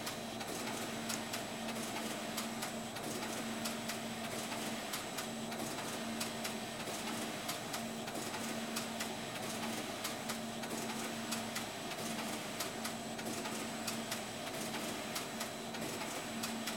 Sandra Johnson talking in the Shetland College UHI, Gremista, Lerwick, Shetland Islands, UK - Sandra Johnson explains how machine-knitted pieces are linked together using linking machines
This is Sandra Johnson talking about linking machine-knitted pieces together after they have come out of the Shima machine. The linking machine has loads of tiny hooks, which each take one stitch from the knitting. The pieces are then sewn together through these stitches by the linking machine. Sandra is explaining how the cardigan she is working on will be joined together, and I am asking her about the whole process. In the background, the shima machine churns on. This is where Sandra works as a linker; she also has a croft in Yell and her own flock of Shetland sheep. I loved meeting Sandra, who has a hand in every part of the wool industry here on Shetland, from growing the wool at the start, to seaming up knitted garments at the end. Recorded with Audio Technica BP4029 and FOSTEX FR-2LE.
6 August 2013